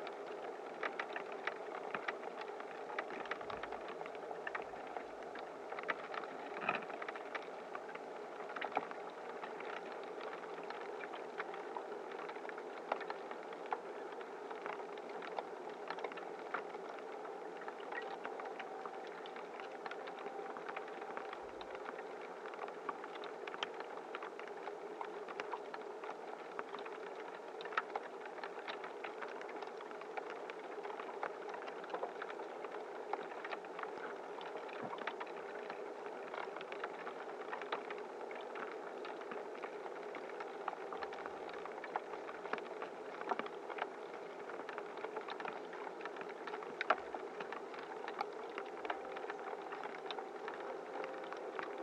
Grybeliai, Lithuania, tiny tiny ice
contact microphones on the list of very tiny ice...in the begining you can hear a plane flying above...